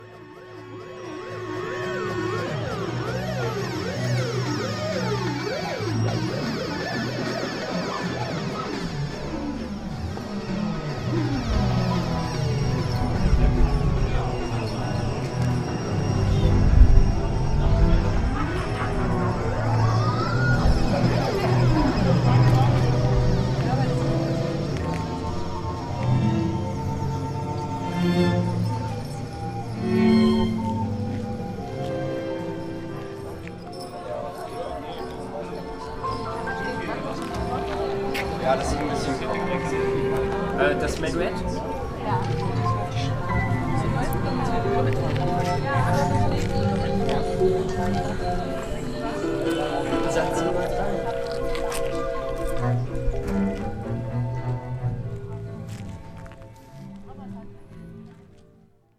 Vegro-Areal, Kirschau, Germany: Sound-Walk at ObPhon11
Sound Walk of 1 min during amazing OpPhon11 Festival of Street Music.